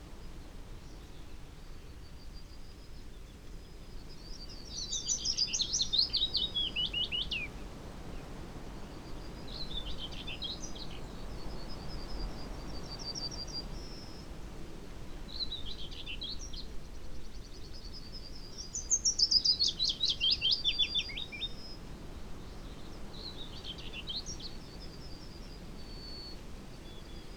Green Ln, Malton, UK - willow warbler song soundscape ... wld 2019 ...
Willow warbler song soundscape ... SASS on tripod ... bird song ... calls ... from ... whitethroat ... crow ... yellowhammer ... wood pigeon ... chaffinch ... robin ... background noise ...